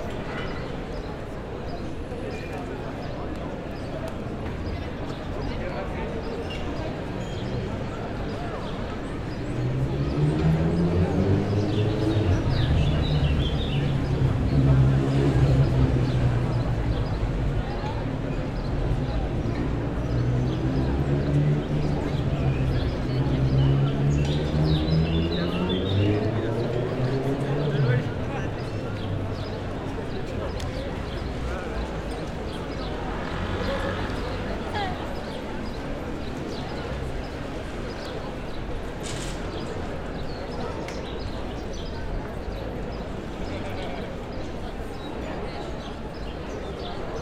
Pl. Saint-Georges, Toulouse, France - Saint-Georges
street, square, bar, birds, city noise .
Captation : ZOOMH6
France métropolitaine, France, 11 June, ~18:00